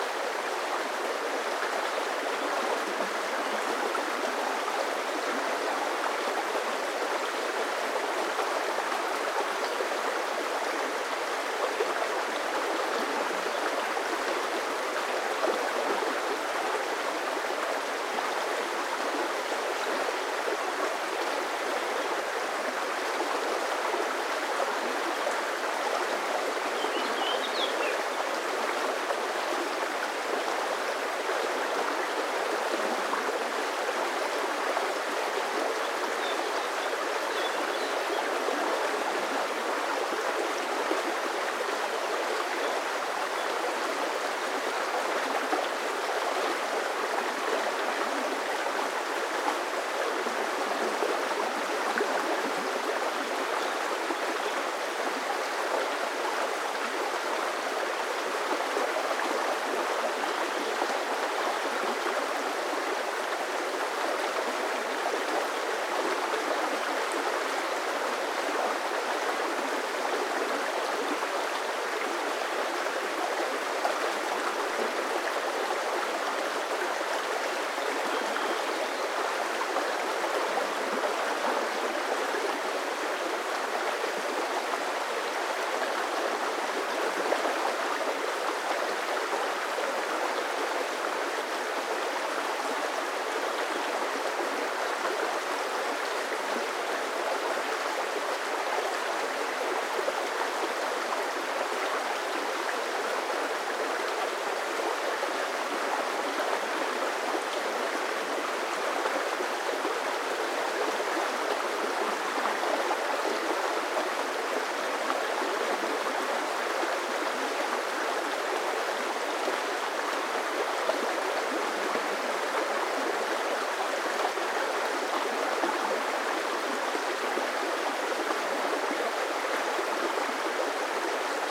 Vyžuonos, Lithuania, at abandoned watermill
River Dusyna at the remains of old watermill
Utenos rajono savivaldybė, Utenos apskritis, Lietuva